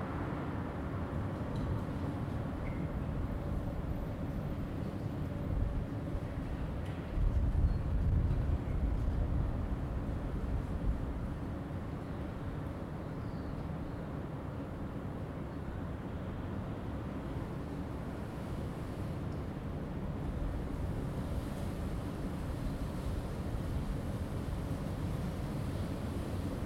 Rivierenbuurt-Zuid, L' Aia, Paesi Bassi - Windy tunnel under trains and next to cars
I was under the bridge in a very windy day. It seems like two trains above and a few cars next to me came by. I used my Zoom H2n without wind protection. The microphone was set pretty close to the ceiling on a column.